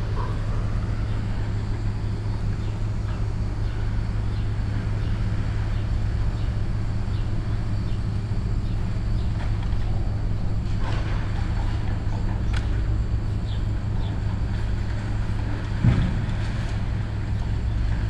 under the bridge at Grenzallee, Neukölln. sounds from the nearby scrapyard, a ship is loaded with scrap metal.
(Sony PCM D50, DPA4060)
Deutschland, European Union, 30 May 2013